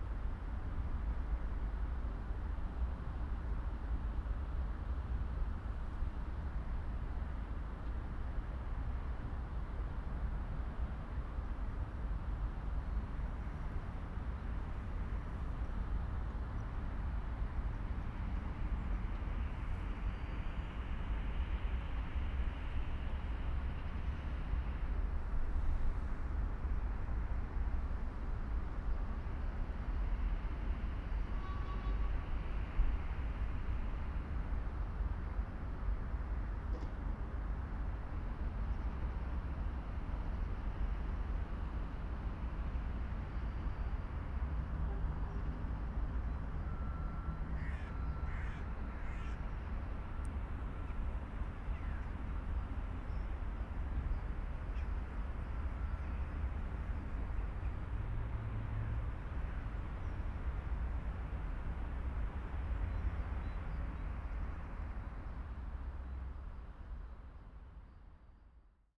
Binckhorst, Den Haag, The Netherlands - weiland bij spoor
wasteland by train tracks. Passing train. ambience. Soundfield Mic (ORTF decode from Bformat) Binckhorst Mapping Project
28 February 2012, ~13:00